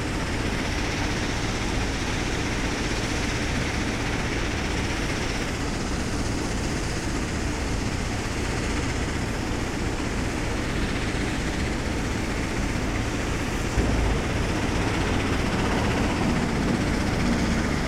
Marolles-sur-Seine, France - Sand quarry
Workers are extracting sand in a huge quarry.
28 December 2016, 14:30